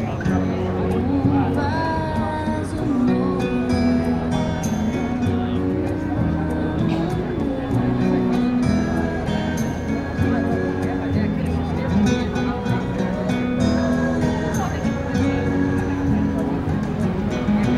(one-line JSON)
{"title": "Calçadão de Londrina: Vendedor de CDs - Vendedor de CDs / CD vendor", "date": "2017-03-13 10:44:00", "description": "Panorama sonoro: músico vendia CDs de música evangélica que produzia nas proximidades da Praça Marechal Floriano Peixoto. Ele utilizava uma caixa de som para intensificar suas músicas. Várias pessoas paravam para conversar com o músico e comprar CDs. Ainda, nota-se músicas provenientes de lojas e anúncios emitidos por um carro de som que circulava nas proximidades.\nSound panorama: musician sold CDs of gospel music that he produced in the vicinity of Marechal Floriano Peixoto Square. He used a sound box to intensify his music. Several people stopped to talk to the musician and buy CDs. Still, one notices tunes from stores and announcements issued by a sound car that circulated nearby.", "latitude": "-23.31", "longitude": "-51.16", "altitude": "615", "timezone": "America/Sao_Paulo"}